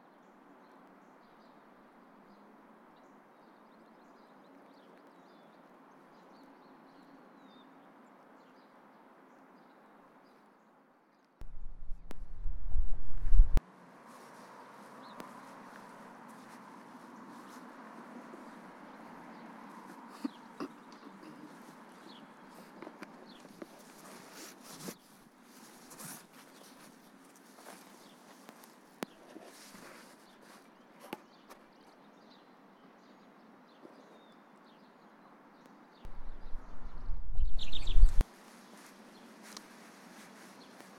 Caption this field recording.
This is a sound walk I experienced on a especially windy day, utilizing a TASCAM DR MKIII to capture the surrounding noises of the environment. The noises that can be heard can range from the chirping of birds, the sounds of the river, dogs walking and a small amount of wind seepage.